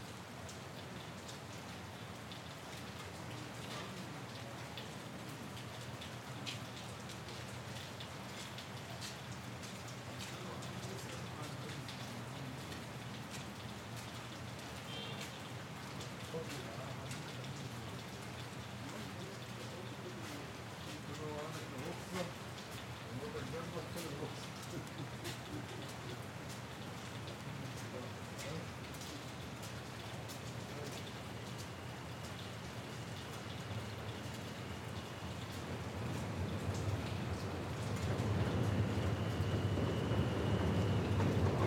Sounds of rain at the M Train elevated station on Forest Avenue.